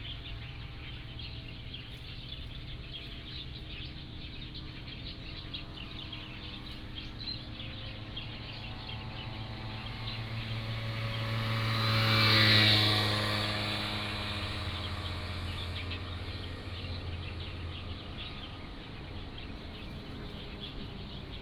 Birdsong Traffic Sound, Lawn mower
Taitung County, Taitung City, 博物館路10號, 9 September 2014, ~10:00